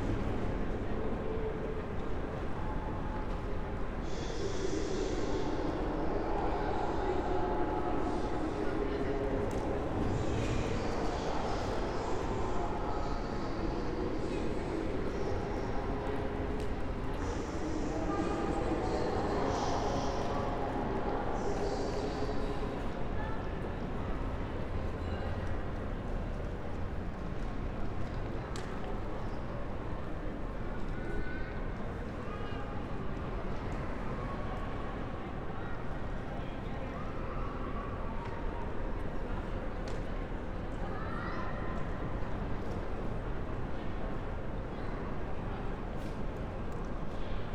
The Squaire, Frankfurt (Main) Flughafen - airport train station, hall ambience
place revisited
(Sony PCM D50, Primo EM172)